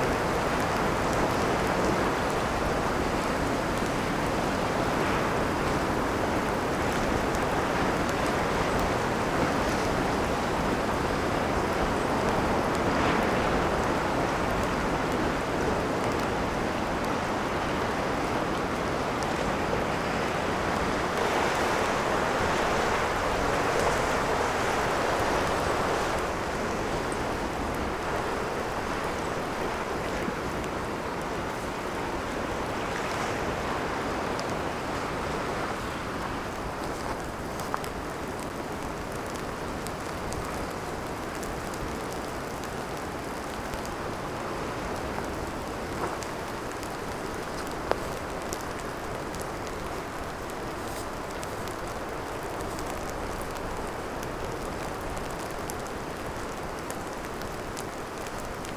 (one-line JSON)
{"title": "Trégastel, France - Sea, Wind & Rain", "date": "2015-03-02 12:24:00", "description": "La pluie tombe sur le sol meuble entre les arbres et la plage.Un peu de vent.\nSoft rain falling on soft dirt under some trees, next to the beach.A little wind.\n/Oktava mk012 ORTF & SD mixpre & Zoom h4n", "latitude": "48.84", "longitude": "-3.50", "altitude": "4", "timezone": "GMT+1"}